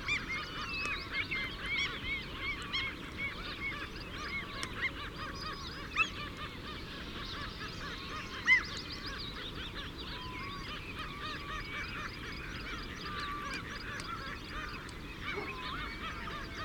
early morning lochan ... with mew gulls ... fixed parabolic to minidisk ... bird calls ... song from ... mew gulls ... curlew ... redshank ... oystercatcher ... common sandpiper ... greylag goose ... mallard ... skylark ... great tit ... chaffinch ... background noise ... some traffic ...
UK